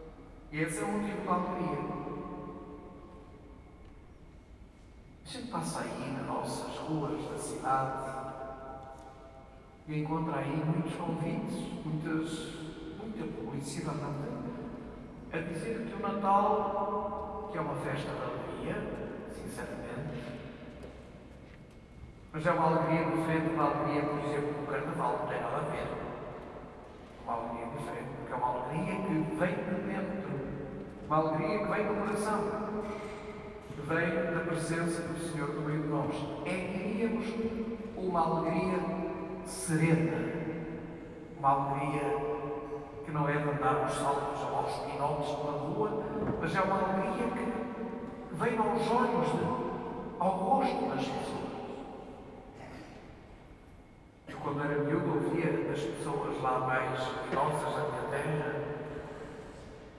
Santo Ildefonso, Portugal - Igreja da Trindade, Porto
Recorded inside Trindade Church in Porto.
Liturgical singing and sermon about happiness and christmas:
"O coração e os olhos são dois amigos leais, quando o coração está triste logo os olhos dão sinais" Luís Otávio
Zoom H4n